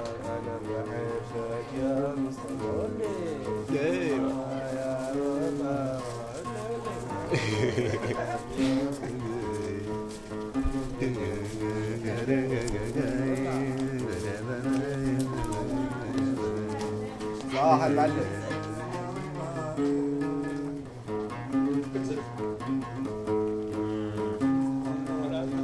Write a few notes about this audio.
Paysage sonore : rencontres, ambiance et bain sonore de la médina de Fes. Lorsque les cultures résonnent ensemble...